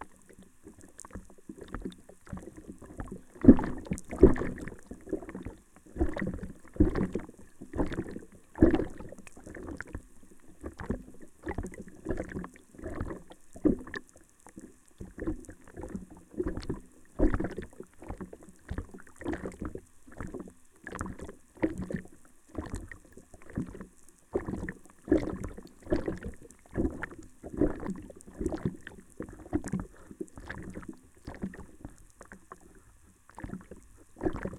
Galeliai, Lithuania, bridge study
little wooden bridge on the lake. three hidden sounds sensors: hydrophone, geophone and vlf receiver